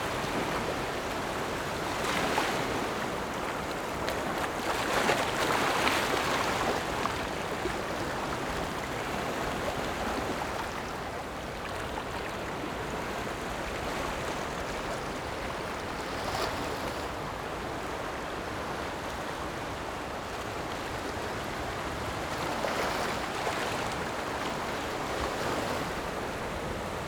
{"title": "頭城鎮大坑里, Yilan County - Standing on the rocks", "date": "2014-07-26 17:38:00", "description": "Standing on the rocks, Sound of the waves, In the beach, Hot weather\nZoom H6 MS+ Rode NT4", "latitude": "24.84", "longitude": "121.83", "altitude": "2", "timezone": "Asia/Taipei"}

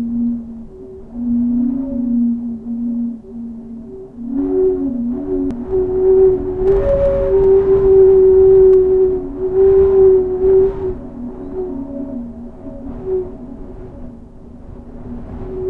{"title": "Court-St.-Étienne, Belgique - Le panneau qui chante", "date": "2015-01-12 17:00:00", "description": "The very powerful wind this winter made a strange sound on a sign. The sign tube had 3 holes. The wind was playing music inside as it was a flute. All this is completely natural and it works only by very windy days !", "latitude": "50.61", "longitude": "4.58", "altitude": "155", "timezone": "Europe/Brussels"}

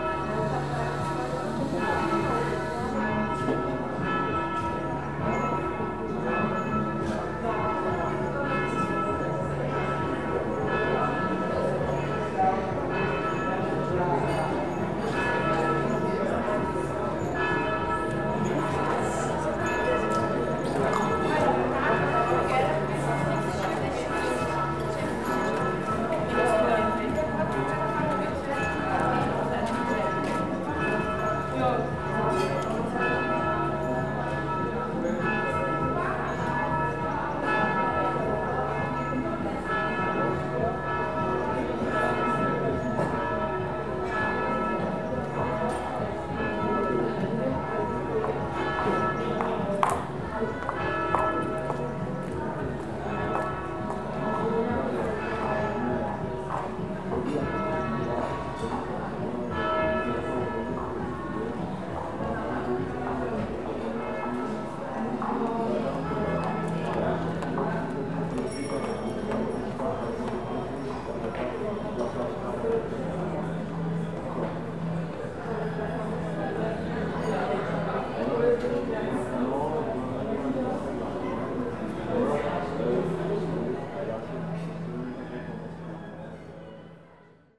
{"title": "Outside, Cafe Ebel, Retezova, Prague 1", "date": "2011-06-26 11:30:00", "description": "Sitting outside Cafe Ebel, Retezova, Prague 1, Sunday Morning", "latitude": "50.09", "longitude": "14.42", "altitude": "203", "timezone": "Europe/Prague"}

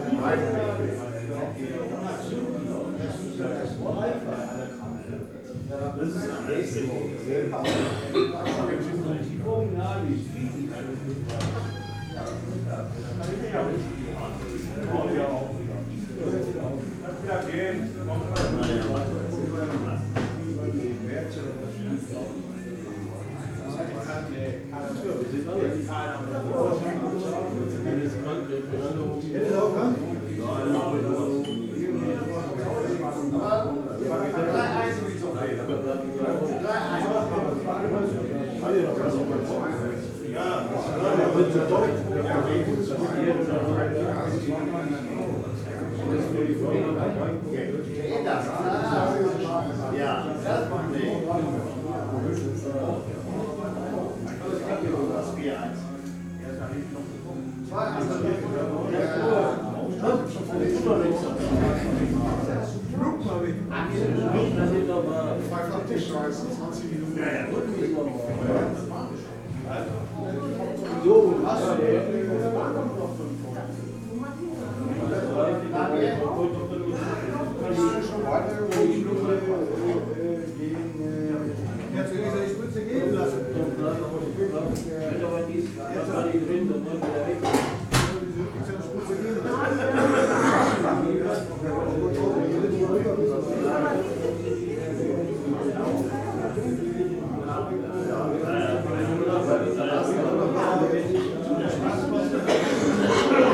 {"title": "haus pinninghoff - gaststätte haus pinninghoff", "date": "2010-01-16 20:59:00", "description": "gaststätte haus pinninghoff, hamm-isenbeck", "latitude": "51.67", "longitude": "7.79", "altitude": "65", "timezone": "Europe/Berlin"}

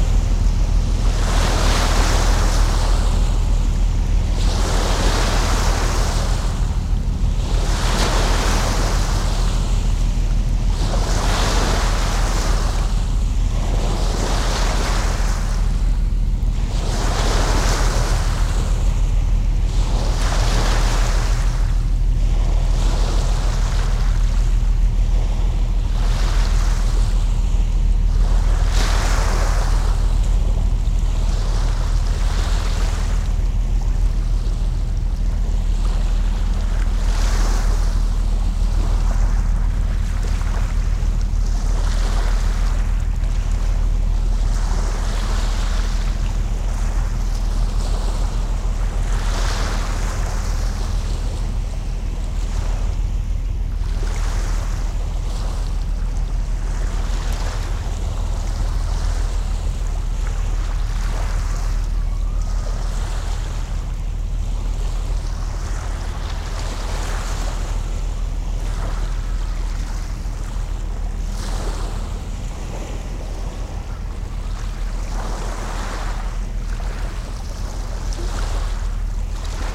{"title": "Am Molenkopf, Köln, Deutschland - ships passing", "date": "2000-06-15 11:40:00", "description": "several ships passing by, waves and pebbles\nrecorded with the microphones only 10 cm from the ground on an Aiwa HD-S1 DAT", "latitude": "50.97", "longitude": "7.00", "altitude": "38", "timezone": "Europe/Berlin"}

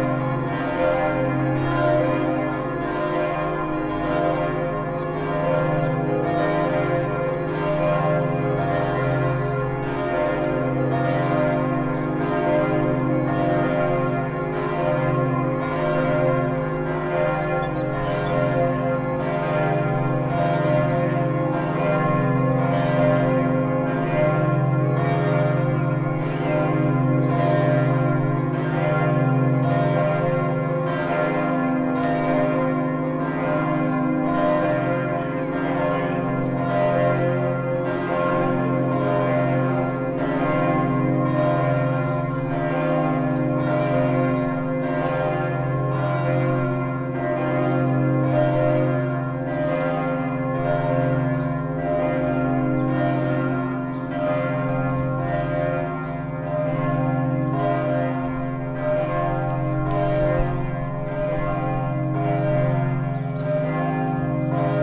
{"title": "University Maribor - Stolnica church bells", "date": "2008-06-06 12:00:00", "description": "Nice ringing of the bells of Stolnica church.", "latitude": "46.56", "longitude": "15.64", "altitude": "275", "timezone": "Europe/Ljubljana"}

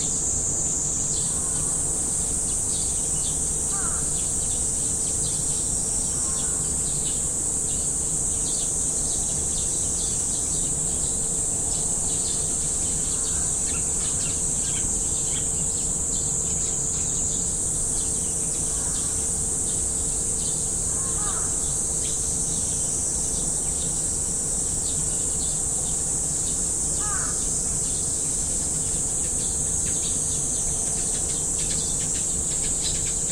{"title": "IUJ, Urasa, Japan", "date": "2010-07-19 01:29:00", "description": "on my balcony", "latitude": "37.15", "longitude": "138.95", "altitude": "164", "timezone": "Asia/Tokyo"}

